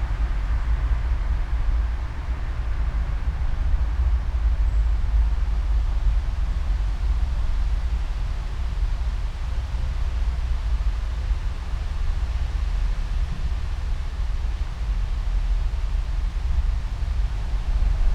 all the mornings of the ... - aug 20 2013 tuesday 06:51